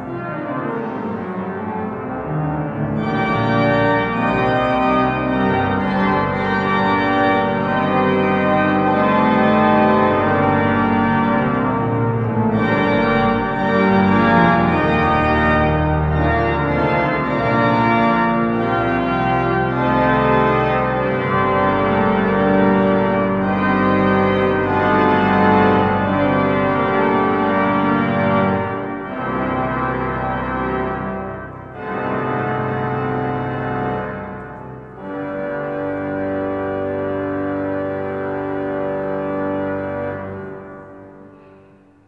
Rue des Papillons, Toulouse, France - Organ Music Church
Organ Music Church
The Basilica of Saint-Sernin (Occitan: Basilica de Sant Sarnin) is a church in Toulouse, France, the former abbey church of the Abbey of Saint-Sernin or St Saturnin. Apart from the church, none of the abbey buildings remain. The current church is located on the site of a previous basilica of the 4th century which contained the body of Saint Saturnin or Sernin, the first bishop of Toulouse in c. 250. Constructed in the Romanesque style between about 1080 and 1120, with construction continuing thereafter, Saint-Sernin is the largest remaining Romanesque building in Europe.[1][2][dubious – discuss] The church is particularly noted for the quality and quantity of its Romanesque sculpture. In 1998 the basilica was added to the UNESCO World Heritage Sites under the description: World Heritage Sites of the Routes of Santiago de Compostela in France.
France métropolitaine, France